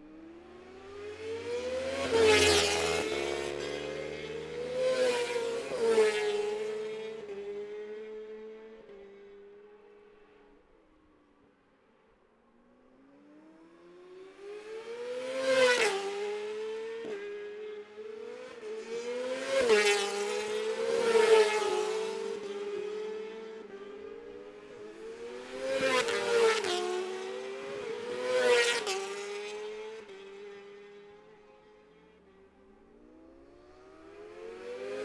Jacksons Ln, Scarborough, UK - Gold Cup 2020 ...
Gold Cup 2020 ... 600 evens practice ... dpa bag MixPre3 ...
11 September, ~12:00